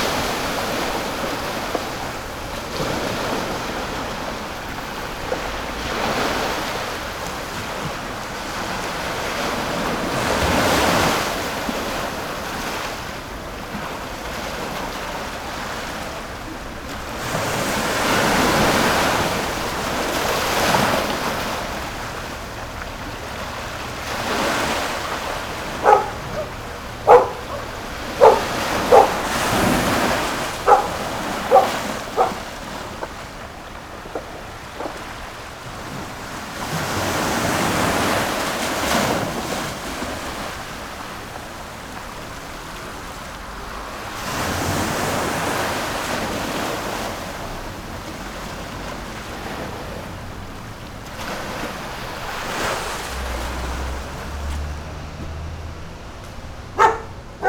淡水海關碼頭, Tamsui Dist., New Taipei City - At the quayside
At the quayside, Tide
Binaural recordings
Sony PCM D50 + Soundman OKM II